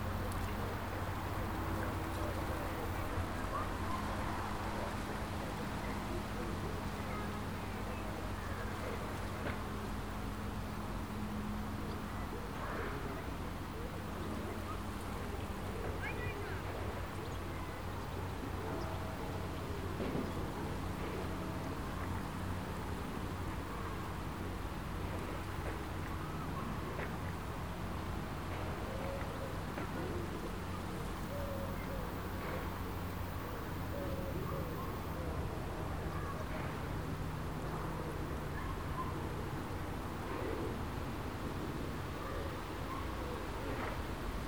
Ferry Ln, United Kingdom - Riverside Meditation, South Stoke
Riverside meditation on the banks of the Thames at South Stoke on a sunny Tuesday afternoon. The wind rustling the bushes overhanging the river is layered with the sounds of pleasure boats and trains passing by, aircraft from nearby RAF Benson and Chiltern Aerodrome, and people relaxing in the gardens of the properties on the opposite side of the river. Recorded on a Tascam DR-40 using the on-board microphones (coincident pair) and windshield.
15 August 2017